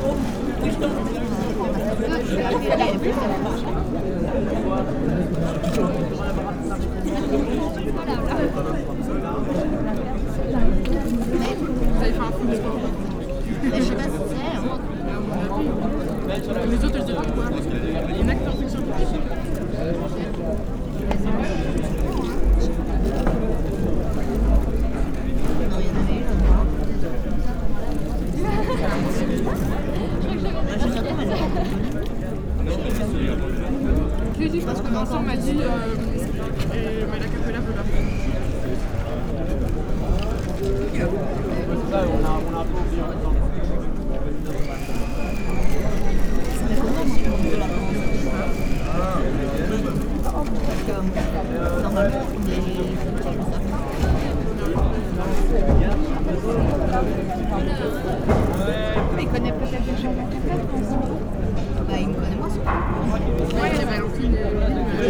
Ottignies-Louvain-la-Neuve, Belgique - Place des Sciences
Students enjoy the sun, on a nice square.
Ottignies-Louvain-la-Neuve, Belgium, 10 March 2016